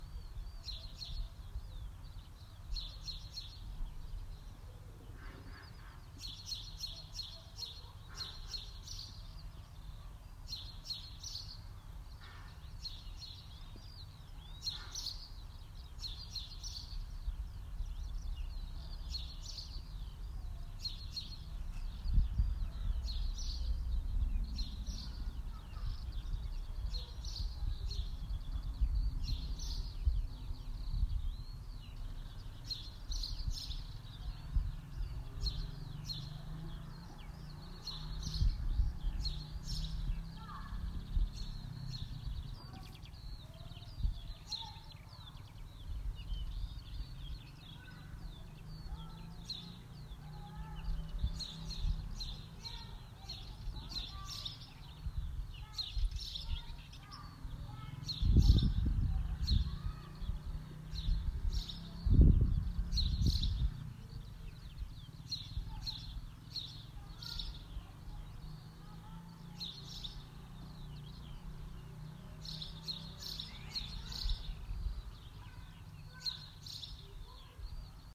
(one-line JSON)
{"title": "Stonės g, Mazūriškės, Lithuania - birds in nature", "date": "2020-05-02 13:08:00", "description": "birds, nature, filed sound, back yard", "latitude": "55.77", "longitude": "21.19", "altitude": "17", "timezone": "Europe/Vilnius"}